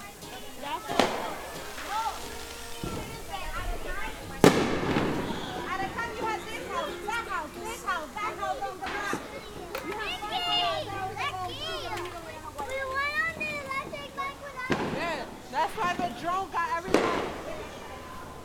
This recording is a soundwalk around the Ridgewood, Queens neighbourhood during the celebration of the 4th of July 2017. Lots of families gathered in the streets having barbecues and throwing fireworks. In each corner of the neighboorhood hundreds of small fireworks were bursting just above our heads. Ridgewood sounded like a war zone if it were not for the laughing and enthusiasm of everyone celebrating.
Recorded with Zoom H6
Carlo Patrão